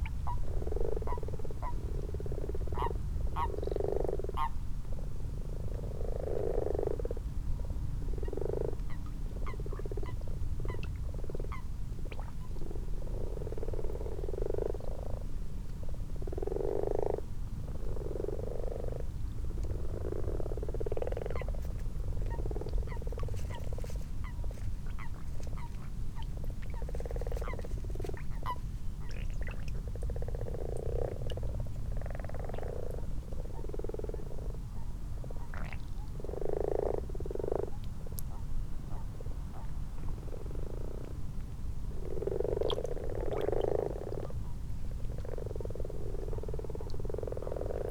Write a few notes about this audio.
common frogs and common toads in a pond ... xlr sass on tripod to zoom h5 ... time edited unattended extended recording ...